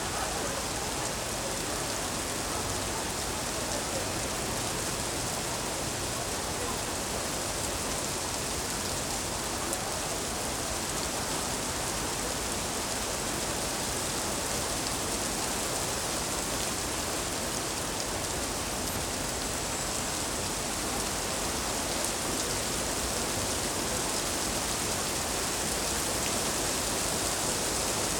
Brabanter Str., Köln, Deutschland - Summer storm
Summer storm, Cologne city centre, Tascam WPM-10 mics, MOTU traveler Mk3
Nordrhein-Westfalen, Deutschland, 4 June, 20:30